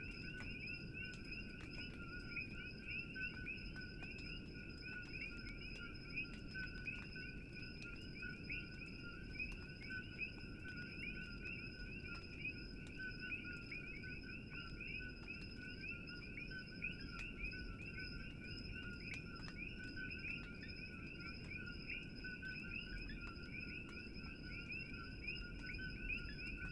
Coqui Big Island Hawaii - Coqui
sound of Coqui's -tree frogs- recorded in February 2008 on east side of Big Island Hawaii ... Ten years ago they still weren't at this location, to my knowledge they are spread now throughout the island and treated as a pest...it is interesting to realise how fast a sound can ended up being a landmark, associated with certain location